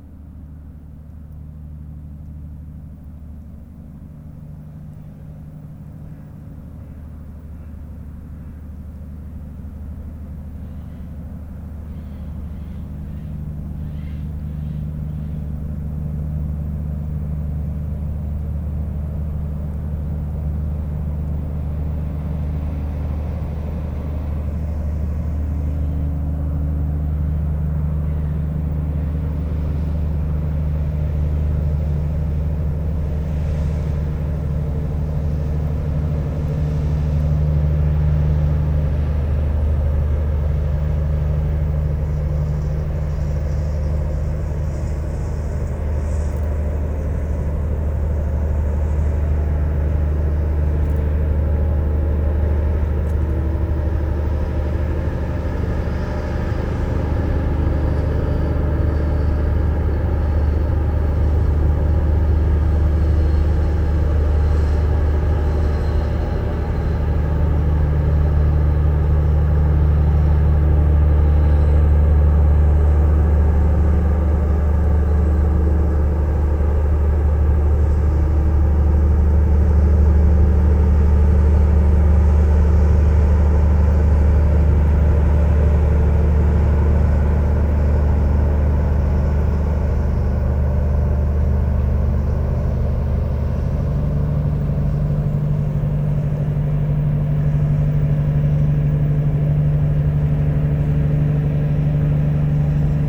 Notre-Dame-de-Bliquetuit, France - Boat
An enormous industrial boat is passing by on the Seine river. This boat is transporting gas and is going to Rouen industrial zone.
17 September, 08:00